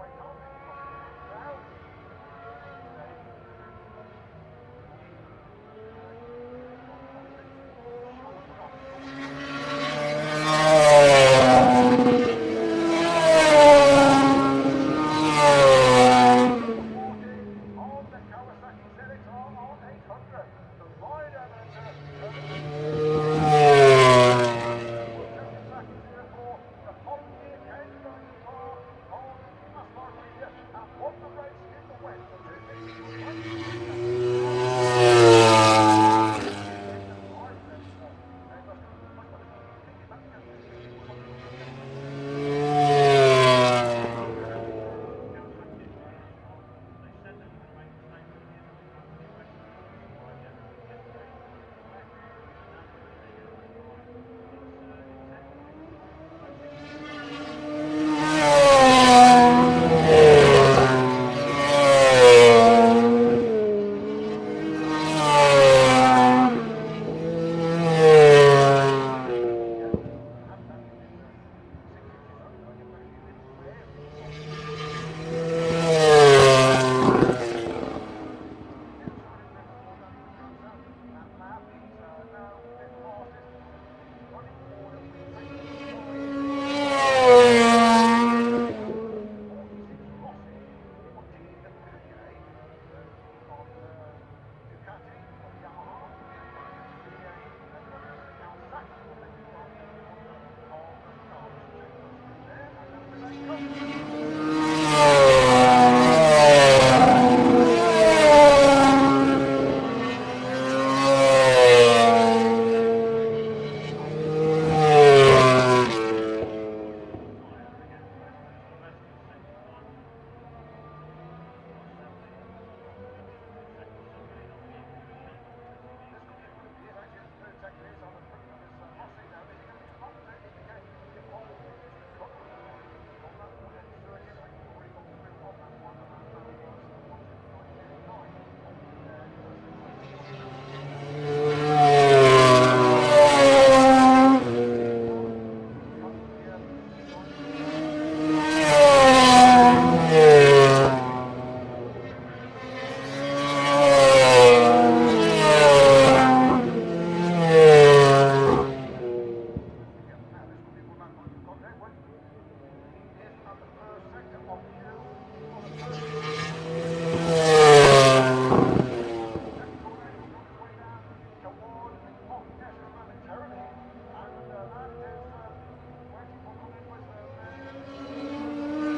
Unnamed Road, Derby, UK - british motorcycle grand prix 2007 ... motogp qualifying ...
british motorcycle grand prix 2007 ... motogp qualifying ... one point stereo mic to mini disk ...
England, United Kingdom